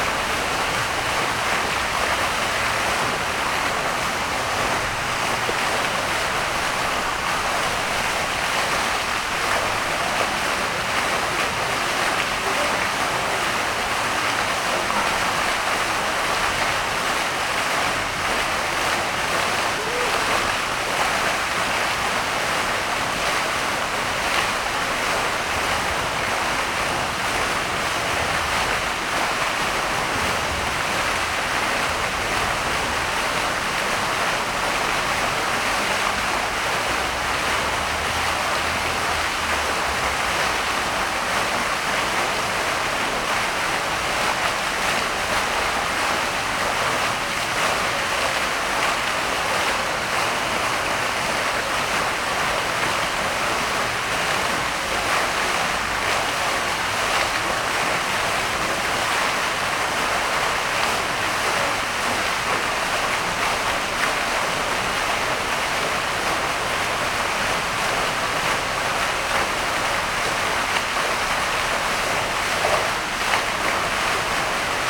Square Fleuriot de lAngle à Nantes ( 44 - France )
Bassin jet vertical
Nantes, France, 26 March 2011